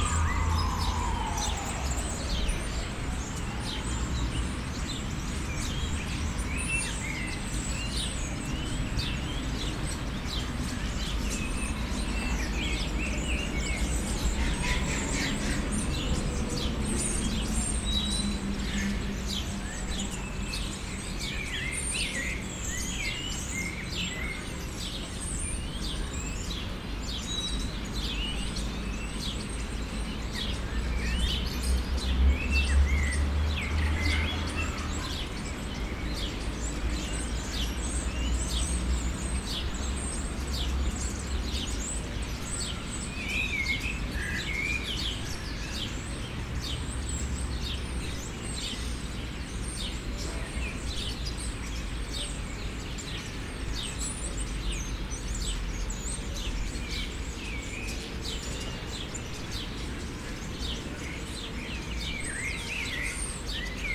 Borov gozdicek, Nova Gorica, Slovenia - The sounds of nature
Stopping in the little woods in the city, birds mixed with the sounds of cars.